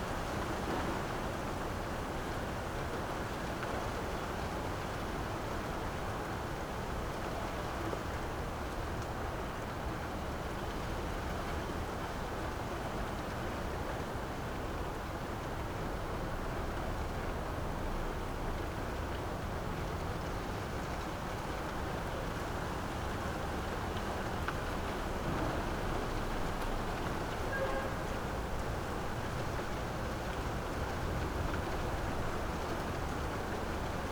Arset Ben Chebi, Marrakesch, Marokko - wind in palm trees
Marrakesh, garden Riad Denise Masson, wind in palm trees at night.
(Sony PCM D50)